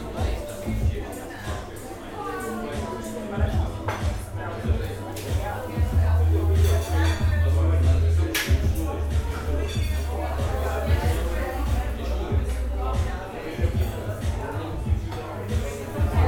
Svencele kite flying center. The map still show an empty place, when in reality there's a cafe with a lot of kite flying maniacs